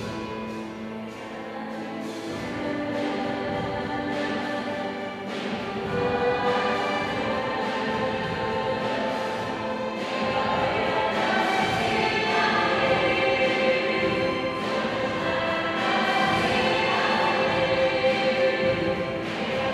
cathedral - gozo, malta
recorded inside the cathedral of gozo, malta - the song was explained to be a traditional maltese song - changed and popularized later by some american folk singers...
(this has been confirmed by other maltese friends! - tell me your story if you know?)
recorded dec. 2002
Victoria, Malta, 18 November 2009